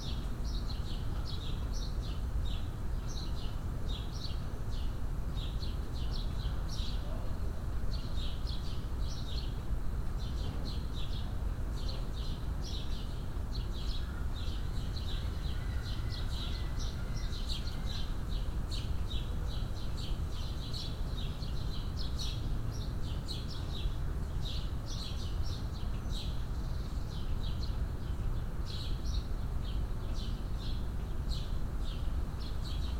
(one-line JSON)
{"title": "Denison Square, Toronto Ontario Canada - 43°3914.5N 79°2407.1W, July 17, 8am", "date": "2020-07-17 08:00:00", "description": "This recording is part of a broader inquiry into the limitations of archiving and the visual strata of the places we call “home”.\nI have been (visually) documenting the curated and uncurated other-than human beings found in my front garden located in Kensington Market across the street from a well-used park. “The Market” is a commercial/residential neighbourhood in traditional territory of the Mississaugas of the Credit, the Anishnabeg, the Chippewa, the Haudenosaunee and the Wendat peoples covered by Treaty 13 and the Williams Treaty.\nIt has been home to settler, working class humans through the past decades, and is known to resist change by residents through participatory democracy. Because of rising rents, food sellers are being pushed out and Kensington is becoming Toronto’s new entertainment district. The pandemic has heightened the neighbourhood’s overlapping historical and contemporary complexities.", "latitude": "43.65", "longitude": "-79.40", "altitude": "99", "timezone": "America/Toronto"}